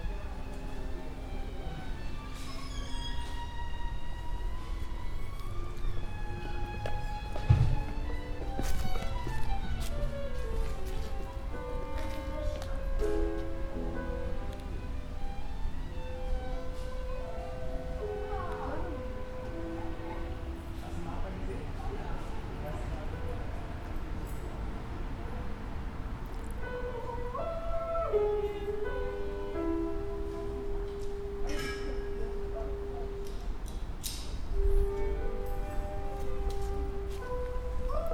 sounds collected outside the music school in Frankfurt Oder